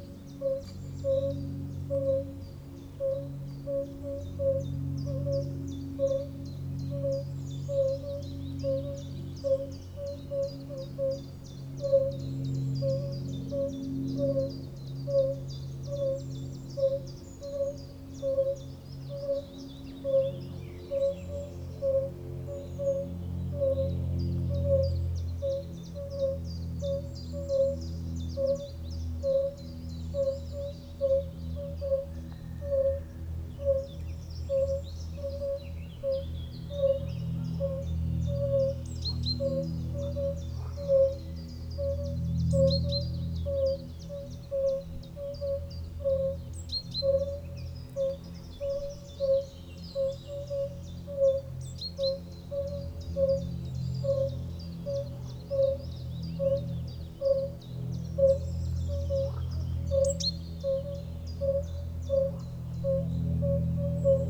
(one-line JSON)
{"title": "Wetland in Knížecí Les, Nosislav, Czechia - Fire-bellied Toad and motor plane", "date": "2018-04-22 15:00:00", "description": "A beautiful place in floodplain forest in region Židlochovicko. This wetland is habitat of rana arvalis, bombina bombina during the spring time.", "latitude": "49.00", "longitude": "16.64", "altitude": "176", "timezone": "Europe/Prague"}